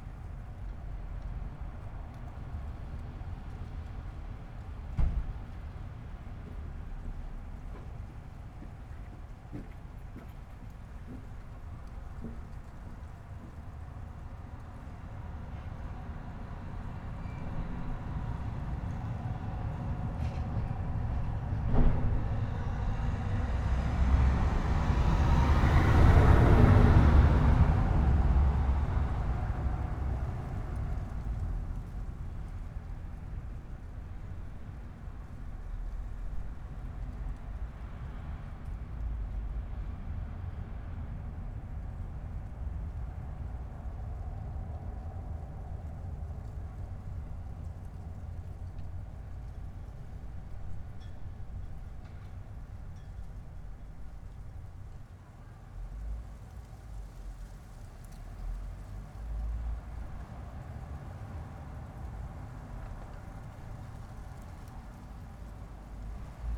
bad freienwalde/oder: uchtenhagenstraße - the city, the country & me: street ambience
mic in the window, street ambience, rustling leaves, church bells
the city, the country & me: january 4, 2015
Bad Freienwalde (Oder), Germany